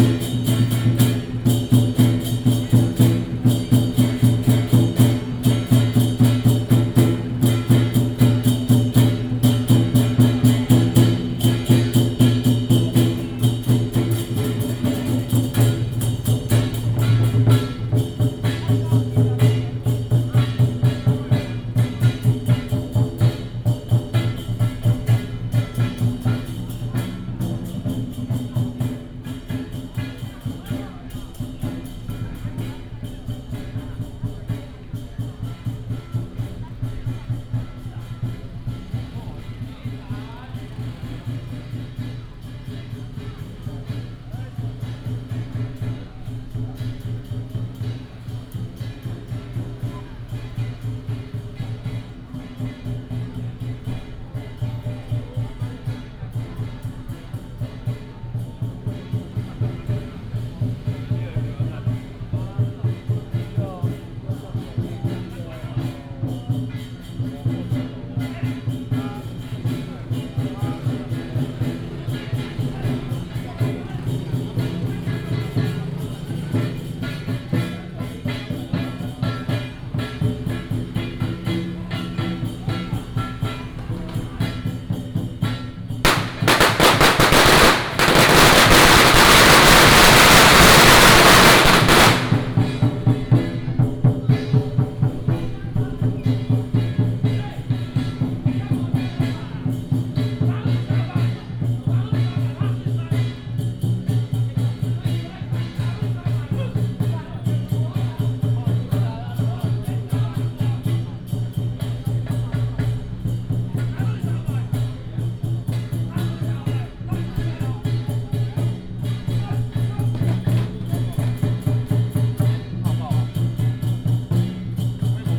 Ln., Beixin Rd., Tamsui Dist. - temple fair
temple fair, Fireworks and firecrackers